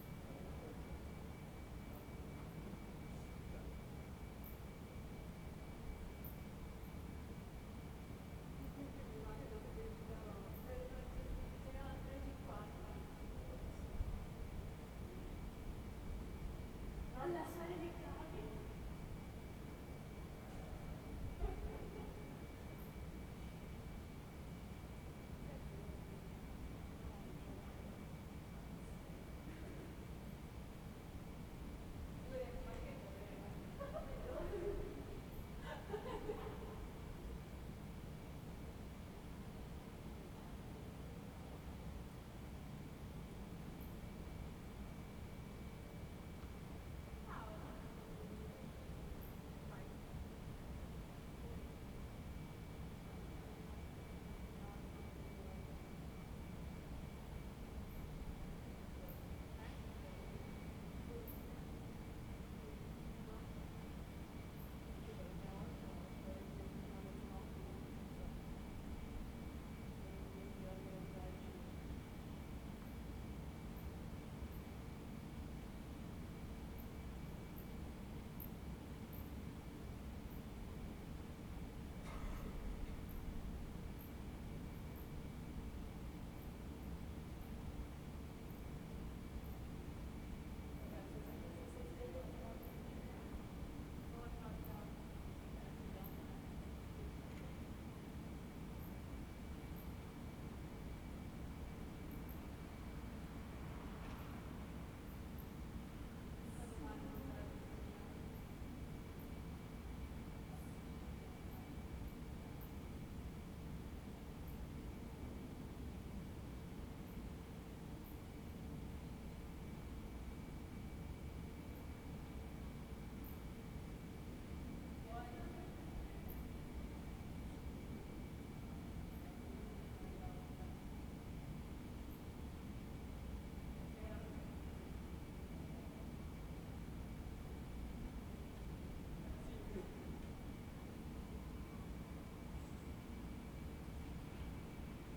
Thursday March 12 2020. Fixed position on an internal terrace at San Salvario district Turin, the night after emergency disposition due to the epidemic of COVID19.
Start at 00:31 p.m. end at 00:56 p.m. duration of recording 25'24''